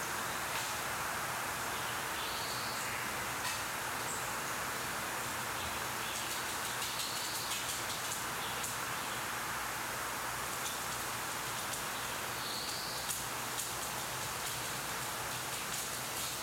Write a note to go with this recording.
Sony WM-D6C / Sony XII 46 / Roland CS-10EM